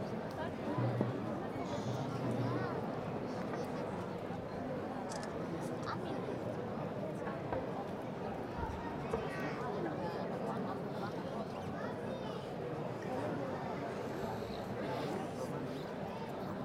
Frigiliana, Málaga, Spanien, Iglesia San Antonio - Easter procession in Andalucia near church
TASCAM DR-100mkII with integrated Mics
19 April 2014, 18:41